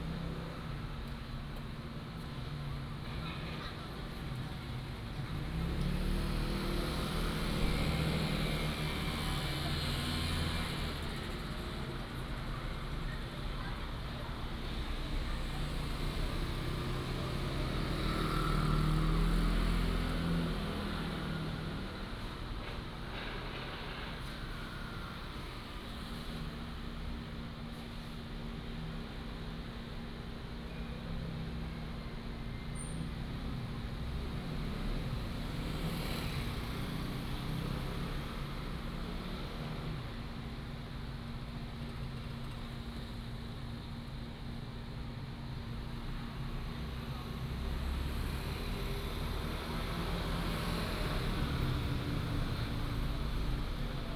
陳氏宗祠, Jincheng Township - In front of the traditional architecture
In the street, In front of the traditional architecture, Traffic Sound